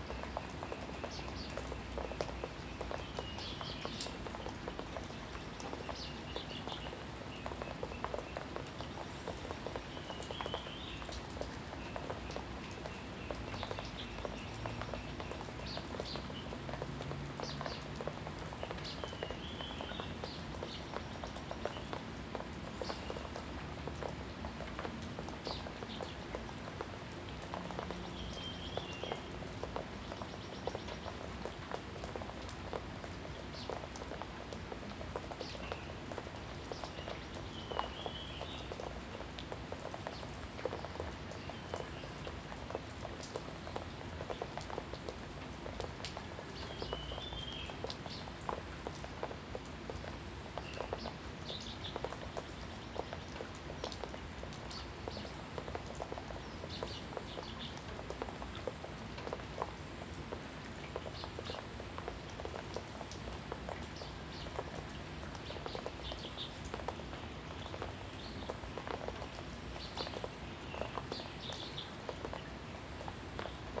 埔里鎮桃米里水上巷3-3, Taiwan - raindrop
raindrop, Bird sounds, Traffic Sound
Zoom H2n MS+ XY
Nantou County, Puli Township, 水上巷